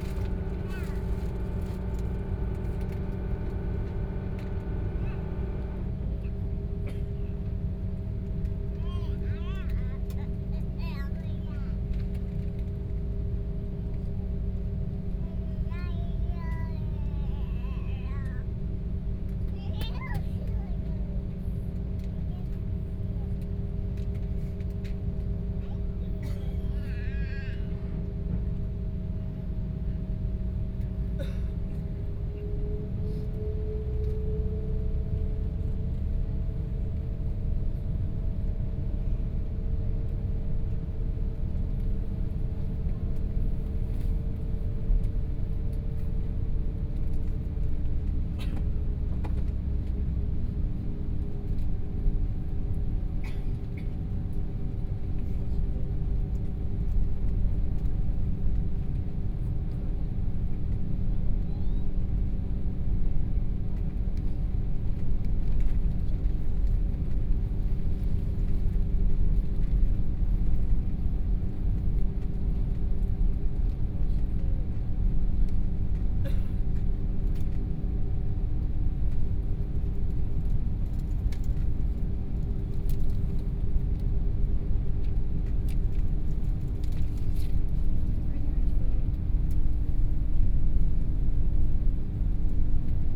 Hongqiao Airport, Shanghai - Inside the plane
Inside the plane, Aircraft interior voice broadcast message, Binaural recording, Zoom H6+ Soundman OKM II